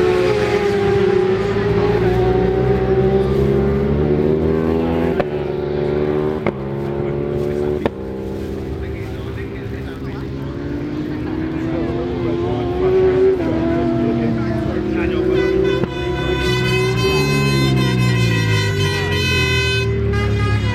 Brands Hatch Circuits Ltd, Brands Hatch Road, Fawkham, Longfield, United Kingdom - World Superbikes 2000 ... Superpole ...
World Superbikes 2000 ... Superpole ... part one ... one point stereo mic to minidisk ...
5 August 2000